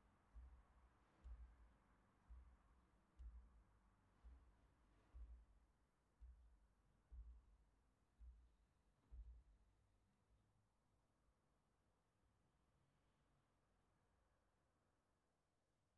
l'Église, Pl. de l'Église, Thérouanne, France - église de Therouanne (Pas-de-Calais) - clocher
église de Therouanne (Pas-de-Calais) - clocher
cloche 2 - volée manuelle
21 March, 15:00